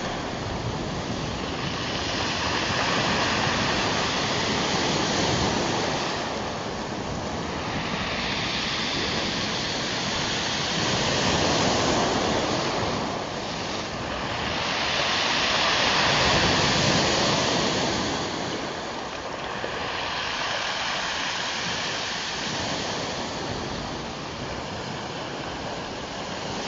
Spiagga di Zoagli
high tide driving waves onto the beach taking the black pebbles back to the sea.
LIG, Italia, European Union